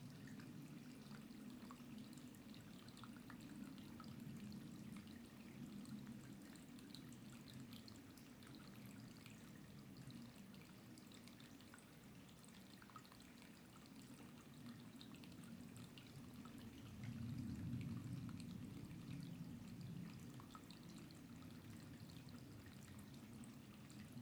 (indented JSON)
{
  "title": "Unnamed Road, Pont-de-Montvert-Sud-Mont-Lozère, France - Sound Scape Forest runoff winter river",
  "date": "2020-01-06 15:17:00",
  "description": "soundscape forest runoff small light winter river and wind in the summits\nORTF DPA 4022 + Rycotte + PSP3 AETA + edirol R4Pro",
  "latitude": "44.34",
  "longitude": "3.72",
  "altitude": "1101",
  "timezone": "Europe/Paris"
}